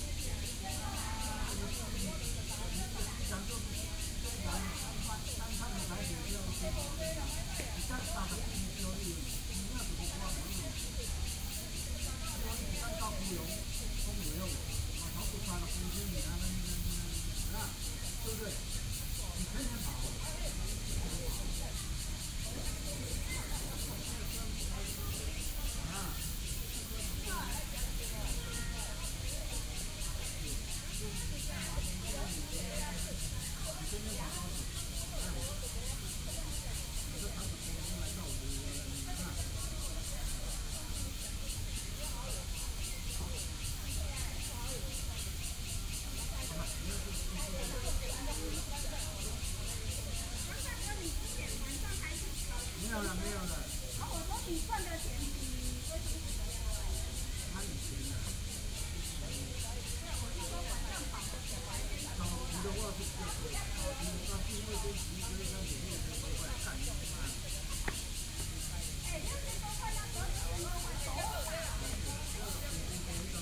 HutoushanPark - In the Park
Chat between elderly, Sony PCM D50 + Soundman OKM II
11 September 2013, ~12:00